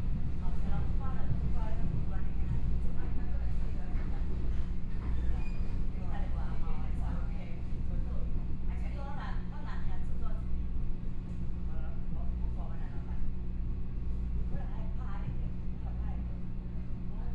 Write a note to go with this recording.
from Daxi Station to Dali Station, Binaural recordings, Zoom H4n+ Soundman OKM II